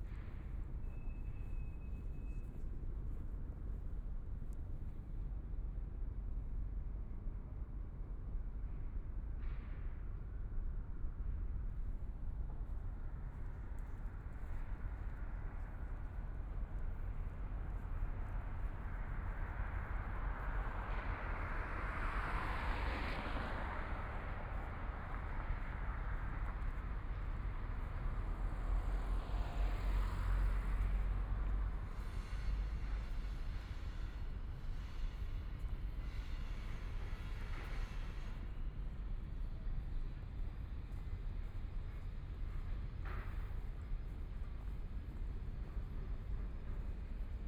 Miao Jiang Road, Shanghai - Environmental sounds
Traffic Sound, Environmental sounds, The sound of distant ships, Construction site noise, Binaural recording, Zoom H6+ Soundman OKM II